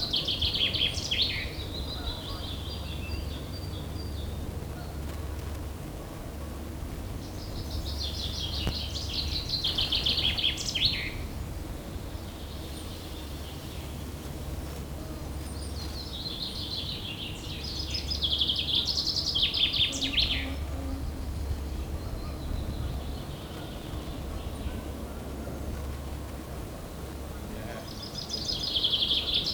Inishail in Loch Awe, UK - Chapel of St Fyndoca
Alba / Scotland, United Kingdom, 8 May 2022, 12:12